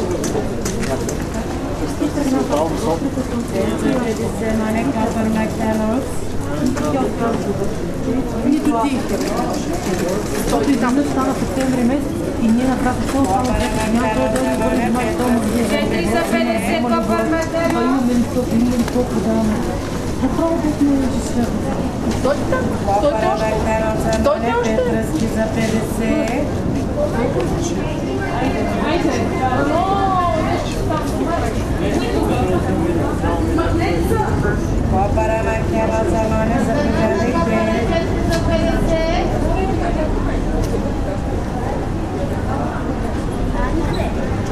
Sofia, Women´s Market - Women´s Market II
Sofia, Bulgaria, 6 October 2012, ~14:00